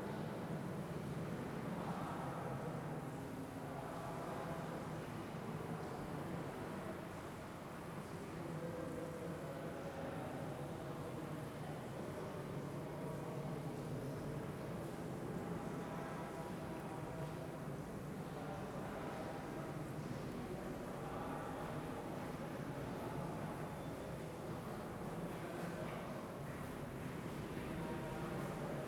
Largo dos Jerónimos, Lisboa, Portugal - Mosteiro dos Jerónimos
Sound of tourists in upper cloister of St Jerome's Monastery in Lisbon. Recorded with a handheld Tascam Dr-05